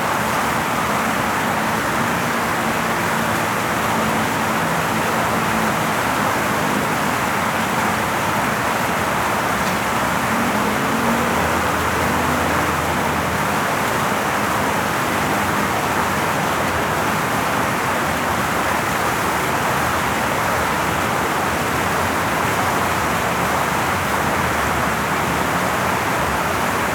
W 50th St, New York, NY, USA - Avenue of the Americas Fountain
Recording of Avenue of the Americas Fountain that features a small waterfall.
New York, United States, 23 August 2022, 17:23